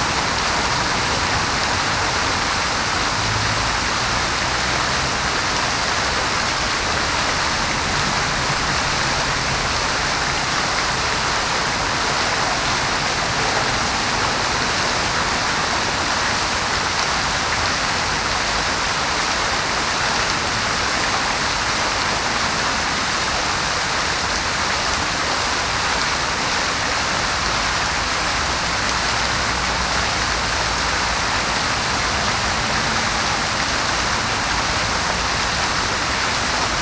Saint-Germain-l'Auxerrois, Paris, France - Fontaine des Fleuves
Fontaine des Fleuves, place de la Concorde, 75001 Paris
Jacques Hittorff, 1840